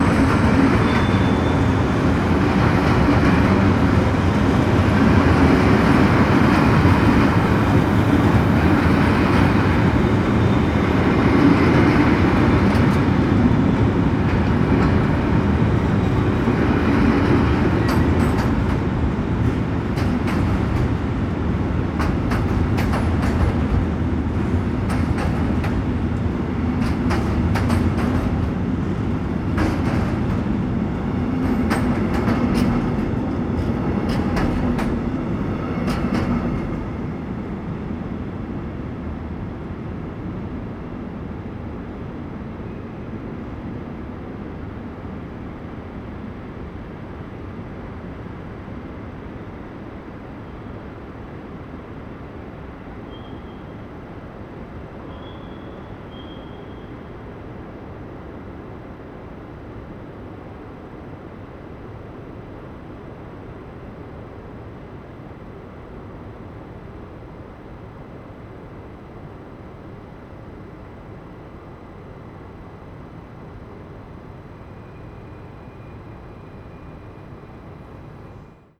basel SBB station - Passing freight train
Passing freight train in Basel train station, measured from platform
Train de fret passant en gare de Bâle (capturé à quai)
Basel, Switzerland